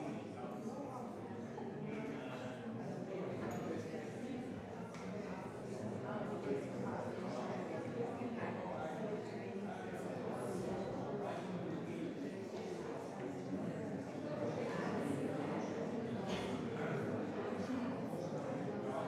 Zürich, Alter botanischer Garten, Schweiz - Raumklang, Glaspavillon
Vor einem Konzert.
Tascam DA-P1 7 TLM 103
Zürich, Switzerland, 8 August 2002, 19:50